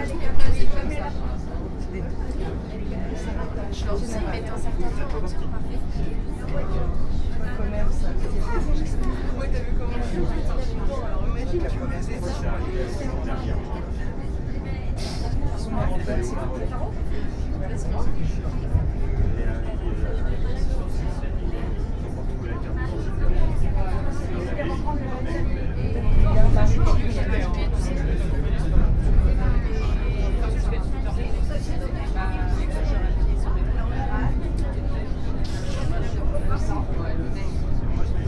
Binaural recording of a tram ride from Bouffay to Chantiers Navals station.
recorded with Soundman OKM + Sony D100
sound posted by Katarzyna Trzeciak
France métropolitaine, France, August 2019